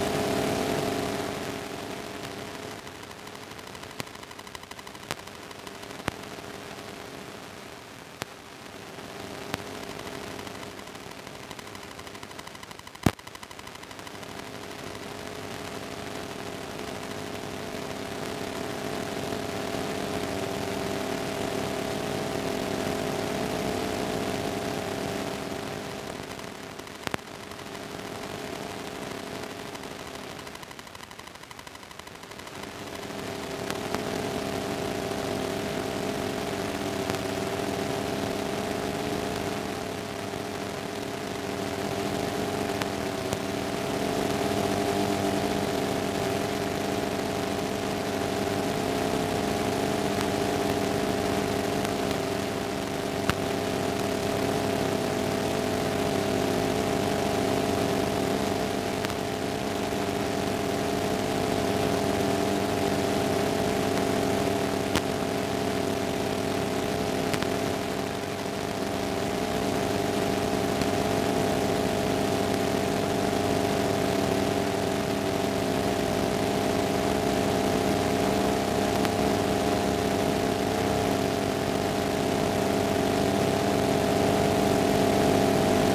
Jūrmala, Latvia, EMF at firefighters; base
slow walk with electromagnetic device Ether around Jurmala's firefighters base
Vidzeme, Latvija, 21 July 2020